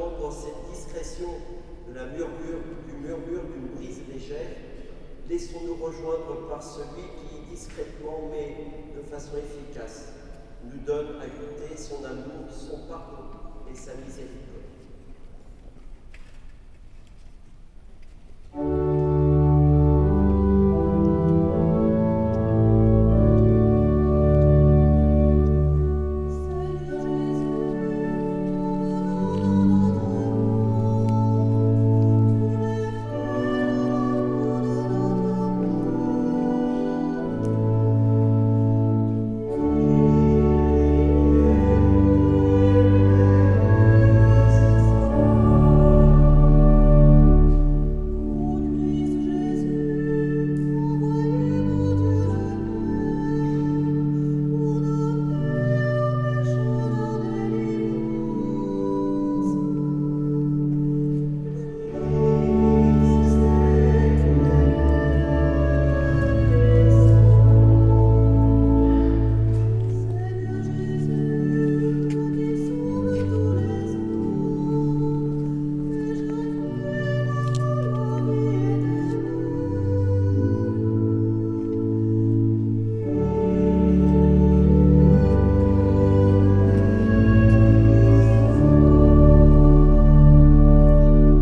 The afternoon mass in the Saint-Gatien cathedral. It's only the beginning of the mass as it's quite soporific.
13 August 2017, 18:40